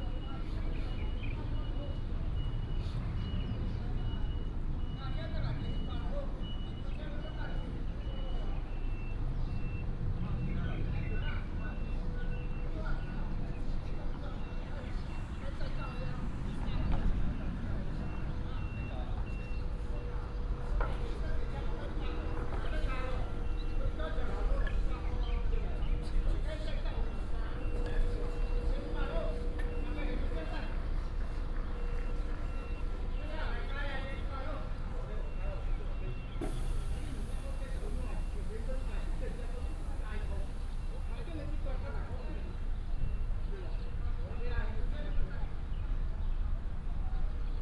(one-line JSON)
{"title": "THSR Chiayi Station, 太保市崙頂里 - in the station square", "date": "2017-04-18 08:32:00", "description": "in the station square, Bird call, Traffic sound, Taxi driver", "latitude": "23.46", "longitude": "120.32", "altitude": "14", "timezone": "Asia/Taipei"}